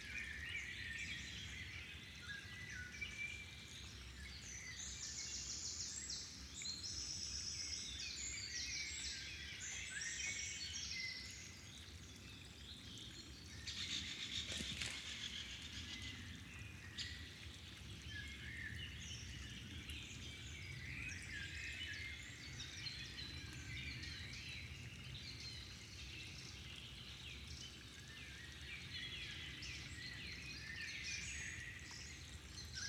Wild Meadow Summer-Dörflis Nature Park Haßberge Germany - Wild Meadow Summer
You can hear the wonderful sound of a wild meadow typical for this area. This place is full of life you can hear different insects and beetles, in a little further distance you can recognize the singing of different species of birds
Setup:
EarSight mic's stereo pair from Immersive Soundscapes
Bayern, Deutschland, July 2022